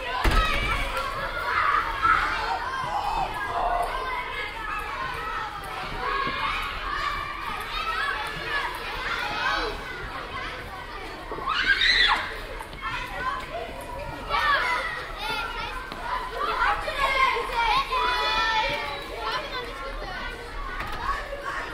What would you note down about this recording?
soundmap: cologne/ nrw, schulhof grundschule zwirnerstr, morgens in der schulpause, project: social ambiences/ listen to the people - in & outdoor nearfield recordings